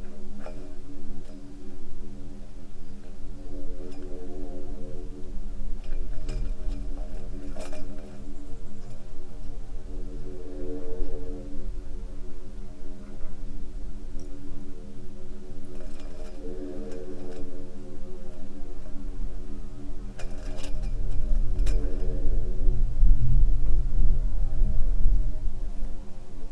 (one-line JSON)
{"title": "quarry, Marušići, Croatia - void voices - stony chambers of exploitation", "date": "2012-09-09 10:47:00", "description": "abandoned quarry near small village Marušići, many big prominent houses in Venice, Vienna and around are build with these beautiful white stones ... many test holes were here ones, I found only one of it now, others are stuffed with sand- day 1", "latitude": "45.42", "longitude": "13.74", "altitude": "269", "timezone": "Europe/Zagreb"}